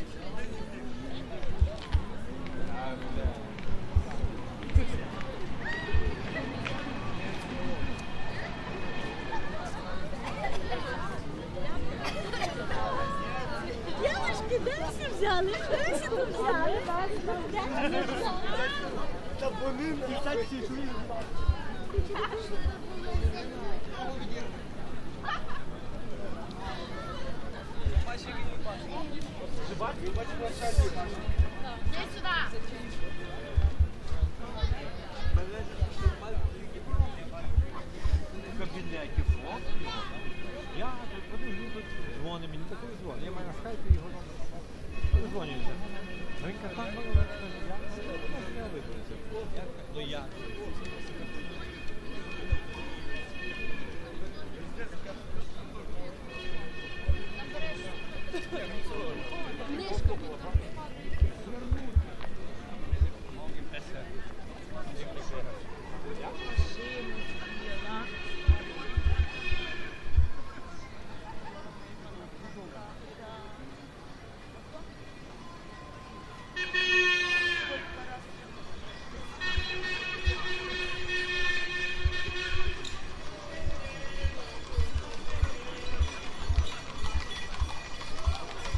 l'viv, prospekt svobody - sunday walk across the boulevard
the odd sounds at the final part of the recording are actually coming from traffic lights